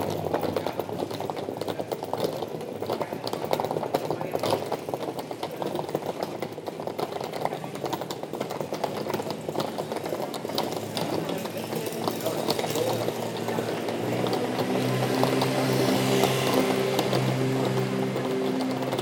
Mechelen, Belgique - Cobblestones street
A very old street of Mechelen, made with cobblestones. People discussing, bicycles driving fast on the cobblestone : the special pleasant sound of an every day Flemish street. At the end, a student with a suitcase, rolling on the cobblestones.